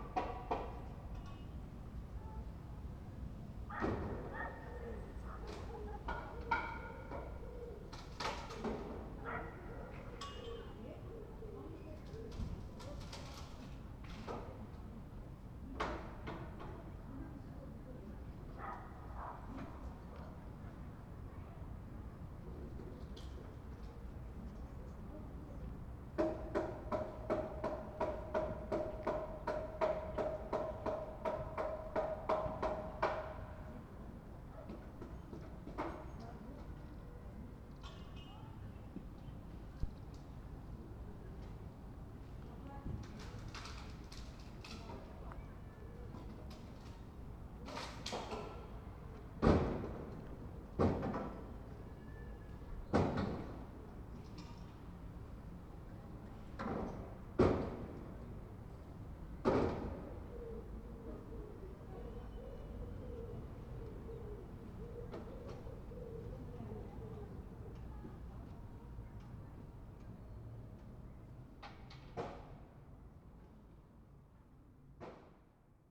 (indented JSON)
{
  "title": "berlin, wildmeisterdamm: gropiushaus, innenhof - the city, the country & me: inner yard of gropiushaus",
  "date": "2011-08-03 17:40:00",
  "description": "voices from the flats, busy workers, a man talking with his dog\nthe city, the country & me: august 3, 2011",
  "latitude": "52.43",
  "longitude": "13.47",
  "altitude": "48",
  "timezone": "Europe/Berlin"
}